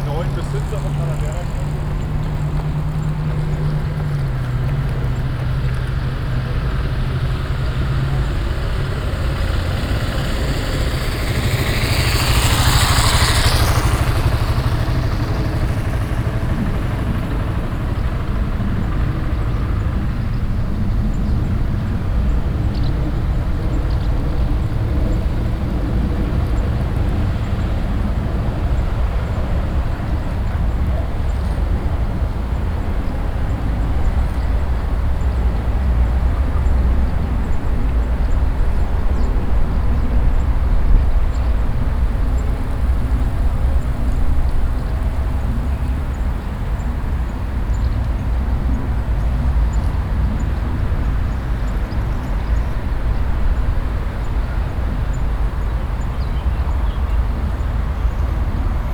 Würzburg, Deutschland - Würzburg, Mainwiesen, afternoon
At the meadows of the river Main at a warm summer afternoon. The constant sonorous traffic sound of the nearby riverdside street - passengers talking and walking by and a jogger passing by. The chirps of some birds in the trees.
soundmap d - social ambiences and topographic field recordings